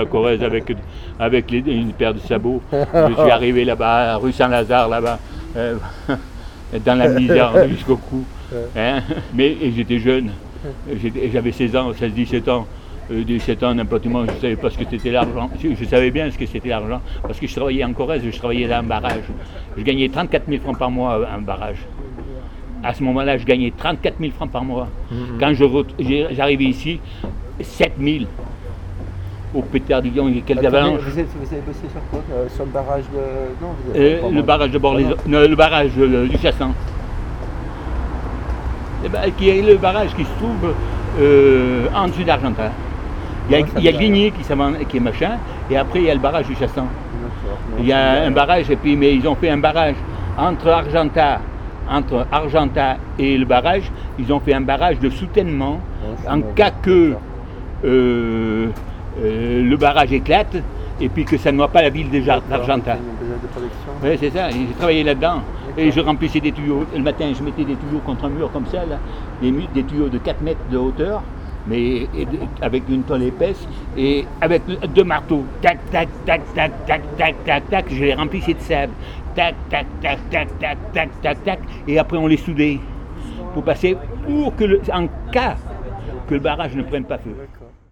Lyon, Quai Raoul Carrie
On a dock near the Saone River, an old man telling how he came to Lyon.
France, 6 July 2011, 18:19